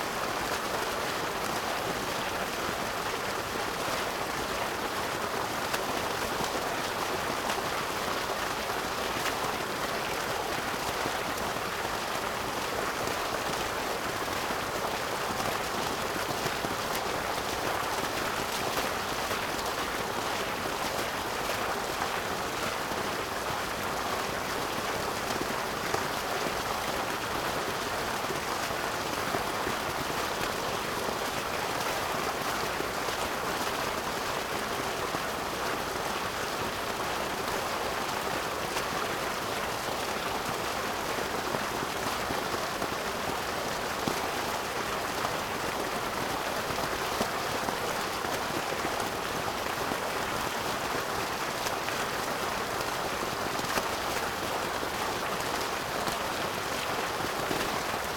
Parc Muncipal, Esch-sur-Alzette, Luxemburg - waterfall fountain
artificial waterfall fountain closeup
(Sony PCM D50)
Canton Esch-sur-Alzette, Lëtzebuerg, 10 May 2022, ~09:00